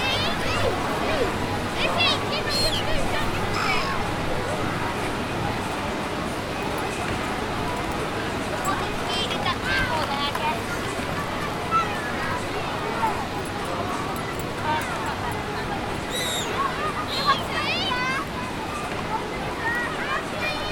City of Sunderland - The City of Sunderland
A short, general day-in-the-life summary of a bustling, excited, active afternoon in and around the City of Sunderland.
Sunderland, UK, 26 July 2014, 12:00